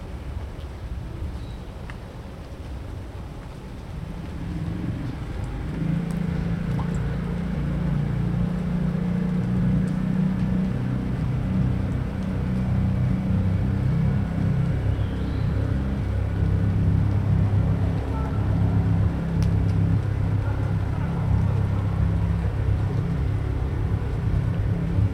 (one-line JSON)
{"date": "2010-07-07 20:00:00", "description": "motorboat, airplane, airgun, people, birds, dog, rain", "latitude": "57.95", "longitude": "27.63", "altitude": "28", "timezone": "Europe/Tallinn"}